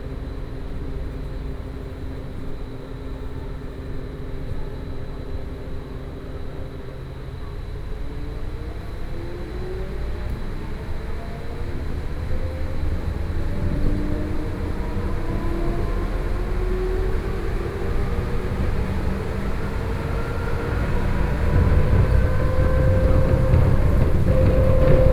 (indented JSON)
{
  "title": "Taipei, Taiwan - On the train",
  "date": "2012-10-25 15:03:00",
  "latitude": "25.07",
  "longitude": "121.55",
  "altitude": "10",
  "timezone": "Asia/Taipei"
}